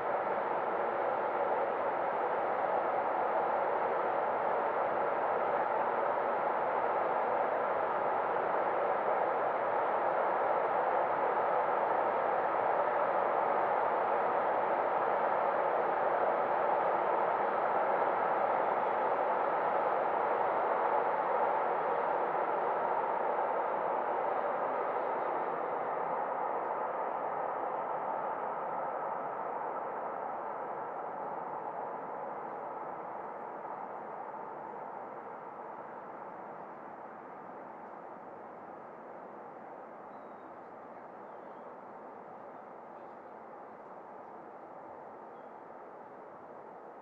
Passing train approching the valley, near Děčín, Czech Republic - landscape shaping sound
the recording was made on 12th of may (2012) in the evening hours, simply with a Zoom H4n. the place is located hillside over the labe/elbe river. trains passing the valley near Decin can be heard several minutes in advance, depending on the circumstances even up to almost 10 minutes (especially trains coming from the north direction). If you listen intently you can hear the certain filtering of the different meanders of the labe/elbe since the railway leads exactly along the river through the mountains. included other sounds: people talking nearby, dog (grisha) barking, no birds singing but airplane passing, ...
Unfortunately I can't contribute a longer recording due to the bad wind protection I had at my disposal that day.
24 June, ~15:00